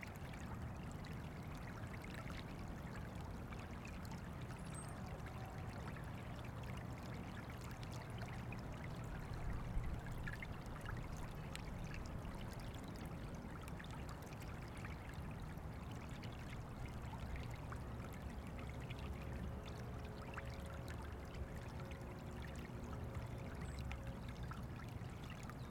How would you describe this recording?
Heman Park north bank of River Des Peres